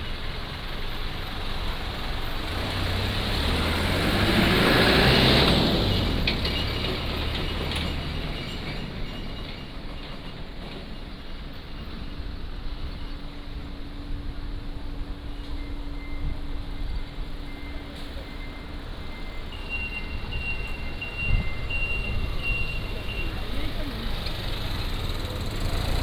Section, Heping East Road, Taipei City - Walking on the road
Traffic Sound, Walking on the road